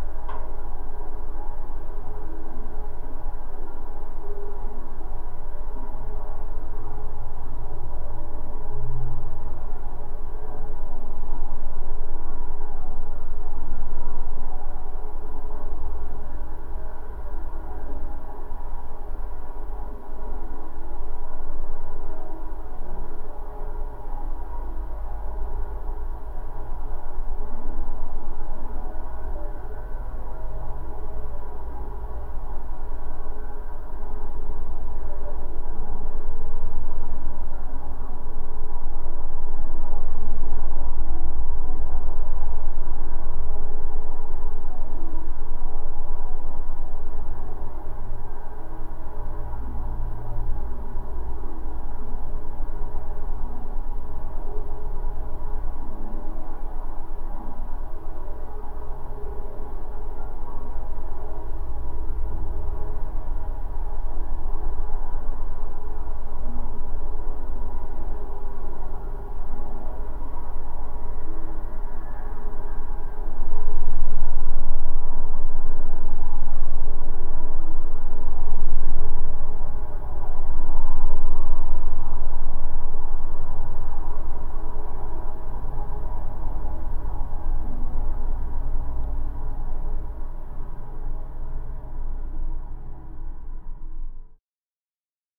Geophone on snall bridge rails.